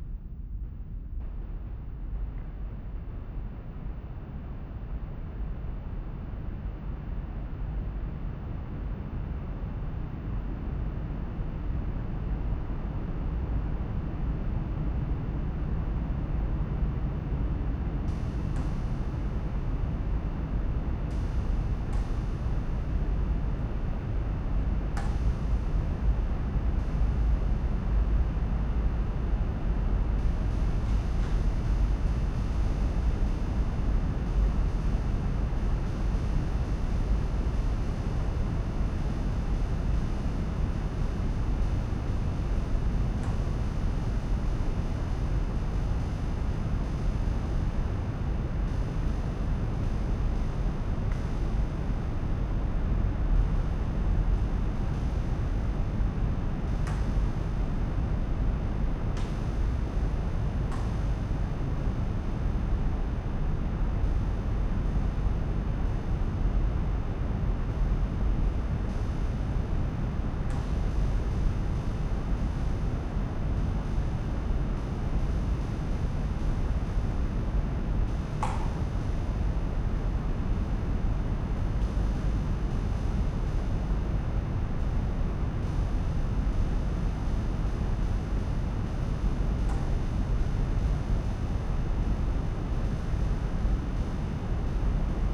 Oberbilk, Düsseldorf, Deutschland - Düsseldorf, tanzhaus nrw, main stage
At the empty main stage hall of the tanzhaus nrw. The sound of the ventilation and the electric lights.
This recording is part of the exhibition project - sonic states
soundmap nrw - sonic states, social ambiences, art places and topographic field recordings
soundmap nrw - social ambiences, sonic states and topographic field recordings